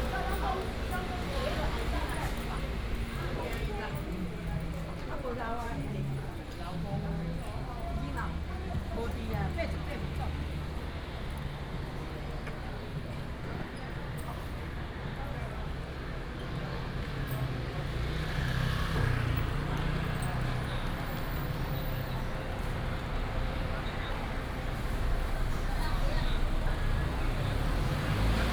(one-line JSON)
{"title": "新庄子公有零售市場, Xinfeng Township - walking in the traditional market", "date": "2017-08-26 08:09:00", "description": "Walking in the traditional market, vendors peddling, housewives bargaining, and girls gossiping", "latitude": "24.90", "longitude": "120.99", "altitude": "23", "timezone": "Asia/Taipei"}